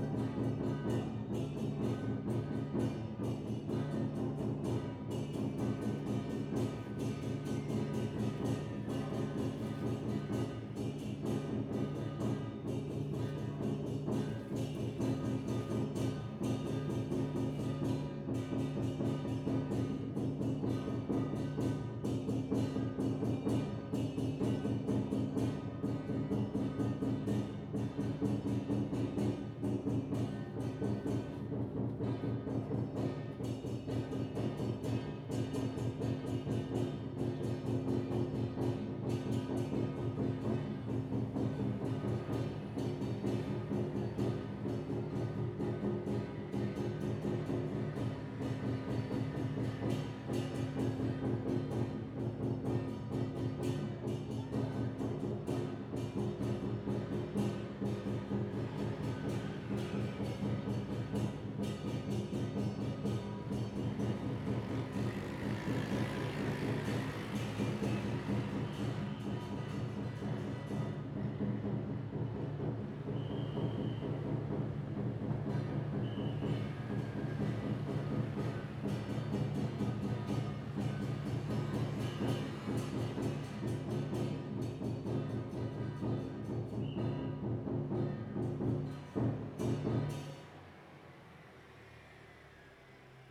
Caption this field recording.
Gongs and drums, Firecrackers, Zoom H2n MS+XY